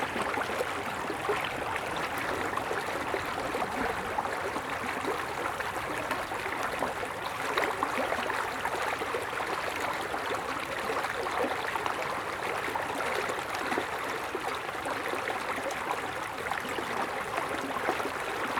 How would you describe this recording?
The senseless babbling of the River Went, near Wentbridge. Although the river was fairly low the water was moving very quickly. (rec. Zoom H4n)